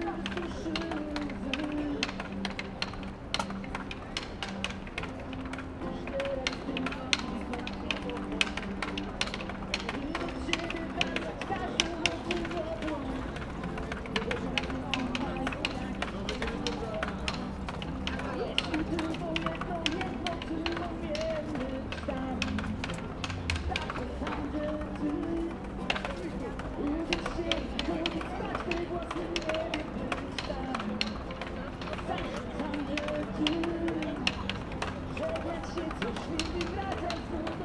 Warsaw, entrance Centrum Metro Station - wooden crate
a cold, gray November afternoon. a man frenziedly hitting on a wooden crate. street musician playing a worn down song. plenty of people walking in all directions.